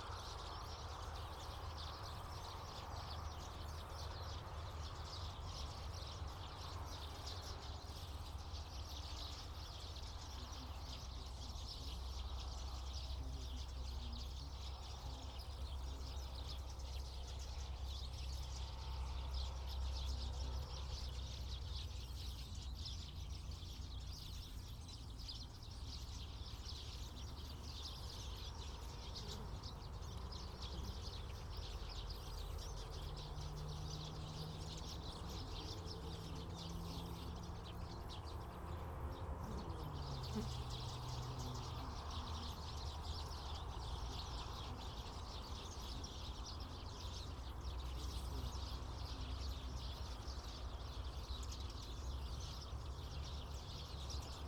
Berlin Wall of Sound, birds at Marienfelder Allee 080909
Berlin, Germany